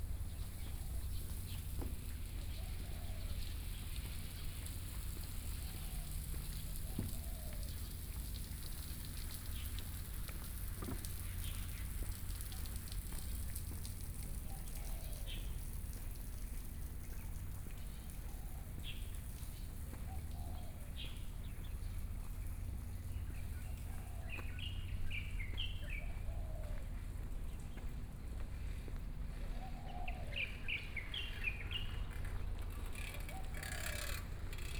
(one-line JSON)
{"title": "鹽埕區新化里, Kaoshiung City - Walk", "date": "2014-05-14 06:31:00", "description": "Birds singing, Morning pier, Sound distant fishing, People walking in the morning", "latitude": "22.62", "longitude": "120.28", "altitude": "4", "timezone": "Asia/Taipei"}